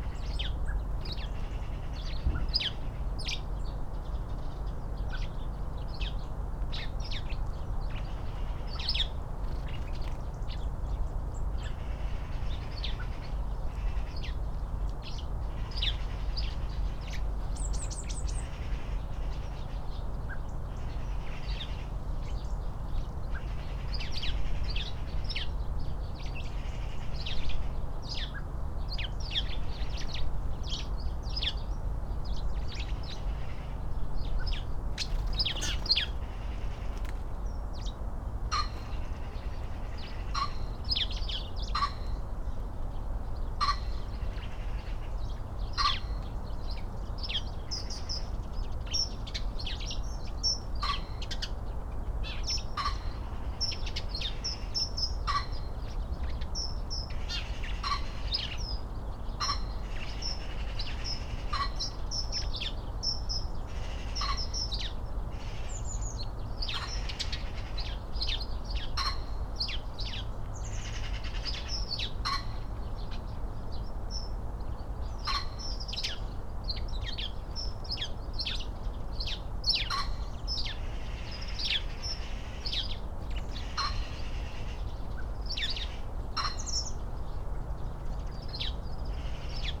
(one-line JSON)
{"title": "Visitor Centre, Cliff Ln, Bridlington, UK - tree sparrow soundscape ...", "date": "2019-12-13 07:50:00", "description": "tree sparrow soundscape ... SASS ... flock of birds in bushes near the reception area of RSPB Bempton Cliffs ... upto 40 birds at any one time ... bird calls from ... jackdaw ... blackbird ... crow ... herring gull ... goldfinch ... robin ... blue tit ... magpie ... pheasant ... dunnock ... pied wagtail ...", "latitude": "54.15", "longitude": "-0.17", "altitude": "92", "timezone": "Europe/London"}